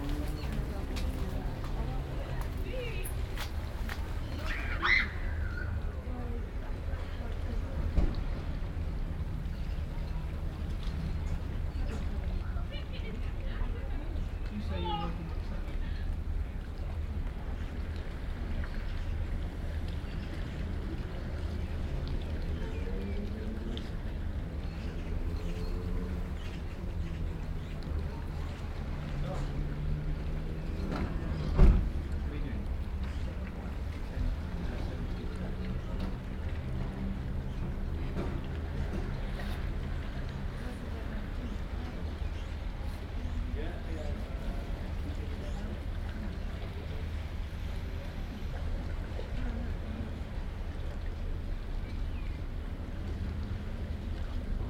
The Stade, Folkestone, Regno Unito - GG FolkestoneFishMarket-190524-h15